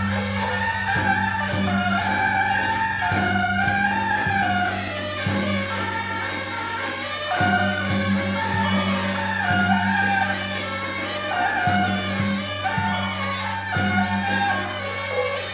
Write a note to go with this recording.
in a small alley, temple festivals, The sound of firecrackers and fireworks, Zoom H4n + Rode NT4